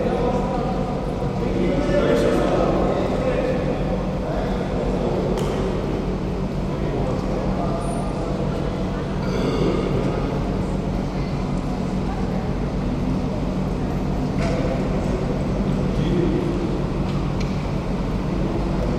Main railway station Zagreb
kolodvor, main hall, part of the EBU sound workshop